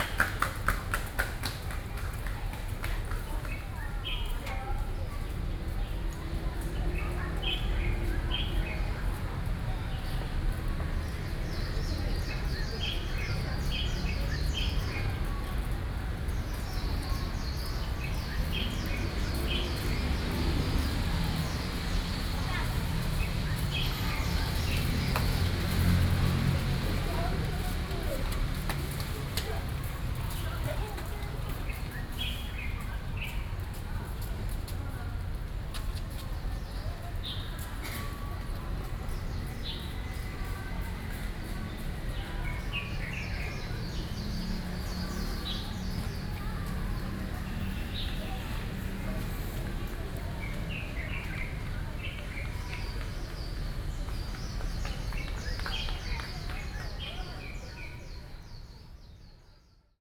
{"title": "Beitou, Taipei - In the Park", "date": "2012-06-23 09:44:00", "description": "in the Park, Sony PCM D50 + Soundman OKM II", "latitude": "25.12", "longitude": "121.52", "altitude": "17", "timezone": "Asia/Taipei"}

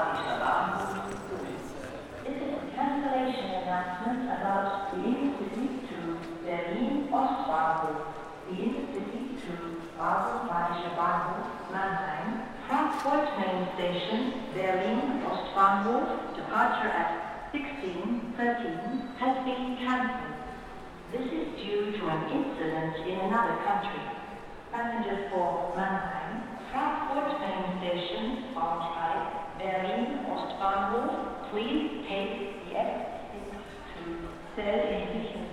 {"title": "Meret Oppenheim-Strasse, Basel, Schweiz - Bahnhof SBB", "date": "2018-02-10 16:09:00", "description": "Soundwalk from main entrance Centralbahnplatz past a playing band across the platform access gallery, listening to an announcement, on to the south entrance of the station. (Zoom H6, MS Microphone)", "latitude": "47.55", "longitude": "7.59", "altitude": "279", "timezone": "Europe/Zurich"}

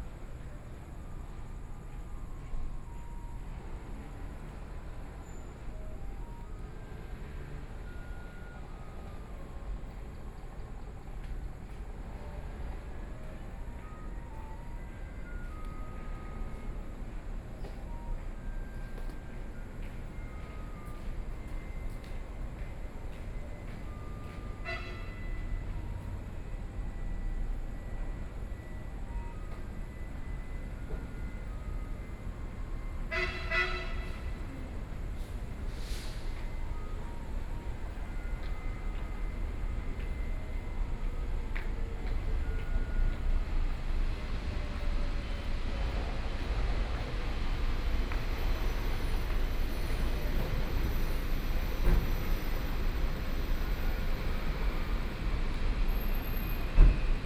{"title": "Chiayi Station, Chiayi City - Outside the station", "date": "2014-09-03 20:14:00", "description": "Outside the station", "latitude": "23.48", "longitude": "120.44", "altitude": "34", "timezone": "Asia/Taipei"}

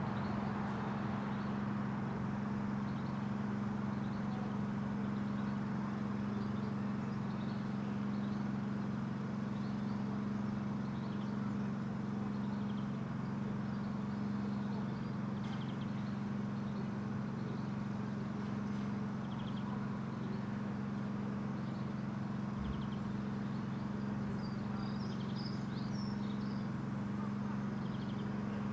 {"title": "Contención Island Day 64 outer east - Walking to the sounds of Contención Island Day 64 Tuesday March 9th", "date": "2021-03-09 10:00:00", "description": "The Drive Moor Crescent Moorfield Little Moor Jesmond Dene Road Osborne Road Mitchell Avenue North Jesmond Avenue Newbrough Crescent Osborne Road St Georges Close\nTidying up the courts\na steady drone from over the broken-down fence\nA remembrance garden crucifix gazes down", "latitude": "55.00", "longitude": "-1.60", "altitude": "60", "timezone": "Europe/London"}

